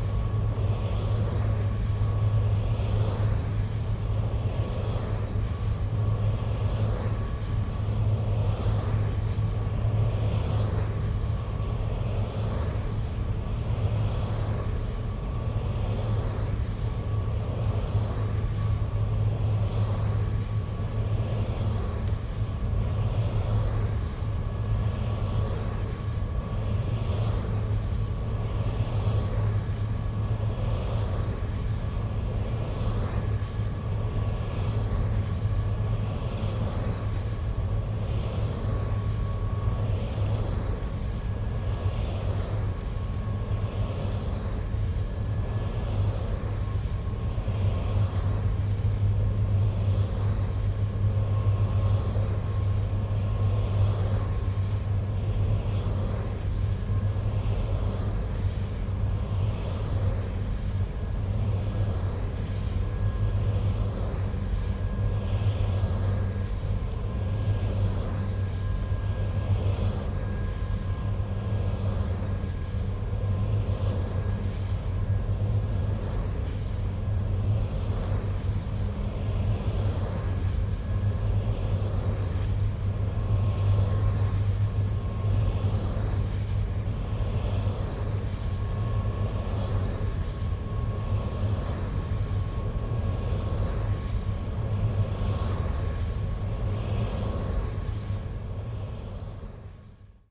Wind farm: a rotating humming generator in the green environment, cycles of birds, weather, distance; audio stream, Bernau bei Berlin, Germany - Pure rotating air
Sounds of a wind generator - one in a farm of around 25 towers - in the flat Brandenburg countryside north of Berlin. A surprising amount of wildlife seems to be able to co-exist with the humming physical presence of these huge towers, especially a good variety of small birds whose habitat is open fields and patchy woodland, such as sky and wood larks. There are many deer. An audio stream was set up for 3 days, with mics hidden in a low bush near a hunting hide at the edge of the trees, to listen to this combination of green tech and nature. It is an 'anthropophone' (term courtesy Udo Noll) - to hear places where problematic interactions between the human and the natural are audible. Given the ever increasing demands for clean energy this rotating humming mix is likely to be the dominant sonic future in rural areas.
The generators follow the rise and fall of wind speeds and the changes of direction. Sometimes they are becalmed.
Barnim, Brandenburg, Deutschland, 23 March 2021, 04:28